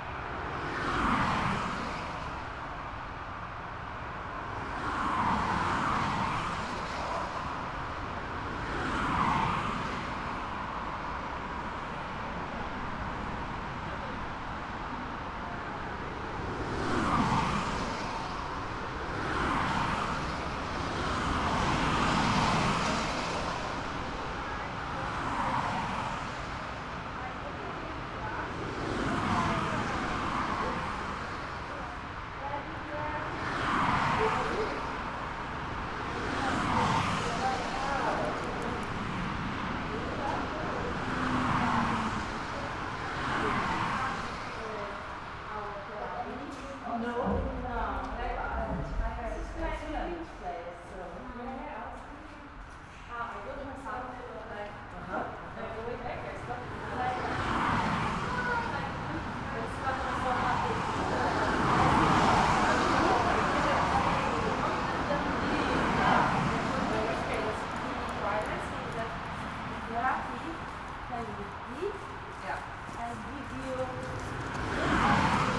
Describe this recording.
narrow Autobahn underpass, a group of cyclists stop in order to let pedestrians walk through. Sound of passing-by cars, (Sony PCM D50)